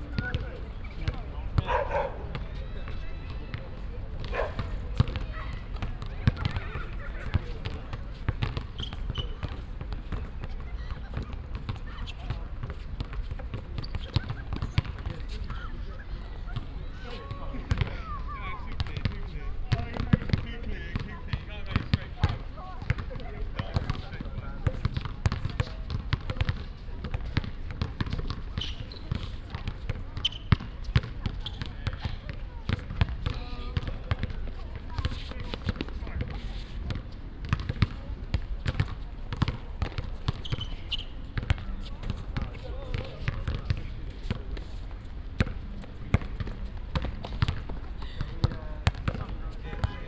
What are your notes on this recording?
At the Brighton seaside at a public basketball field. The sound of bouncing balls echoing on the concrete floor, squeeking sport shoes plus voices of the players and passengers. international city scapes - topographic field recordings and social ambiences